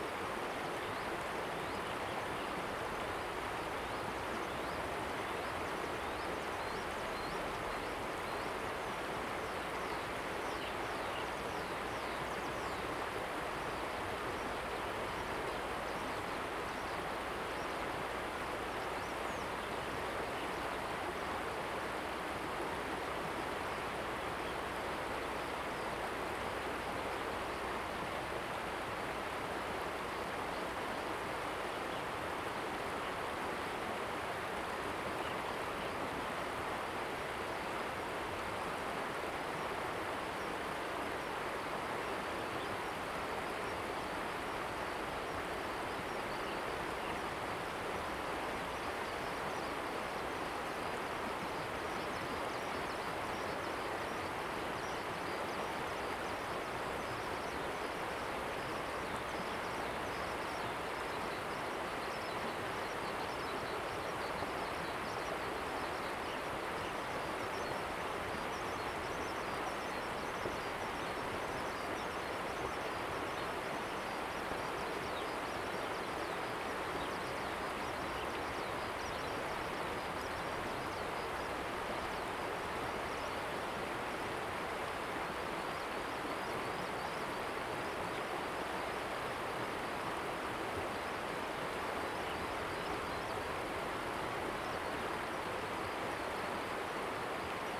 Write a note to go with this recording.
stream, On the embankment, Bird call, Zoom H2n MS+XY